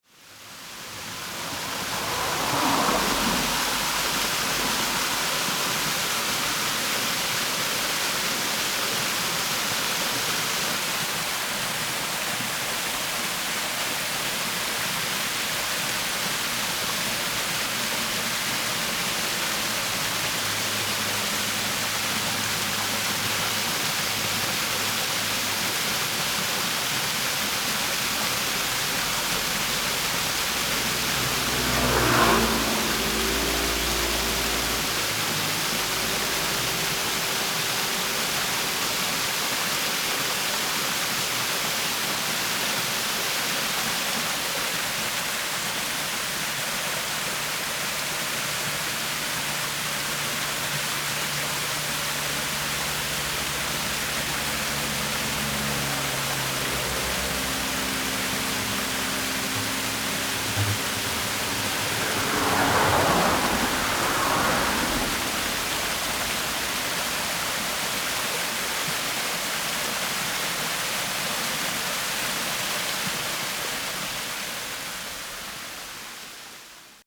Danjin Rd. 石門區尖鹿里 - the small waterfall
Traffic Sound, In the small mountain next to the waterfall
Sony PCM D50